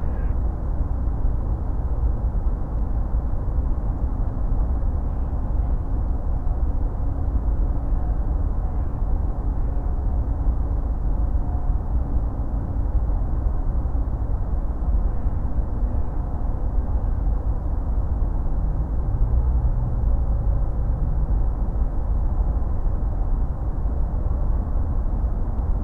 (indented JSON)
{
  "title": "Tempelhof, Berlin - drone, ambience",
  "date": "2013-12-17 13:20:00",
  "description": "it's noisy today on the Tempelhof airfield. deep drones from the motorway A100 south-west, and from excavating work. a pond will be build for advanced water management, the work has started in autumn 2013.\n(PCM D50, Primo EM172 spaced)",
  "latitude": "52.48",
  "longitude": "13.40",
  "altitude": "34",
  "timezone": "Europe/Berlin"
}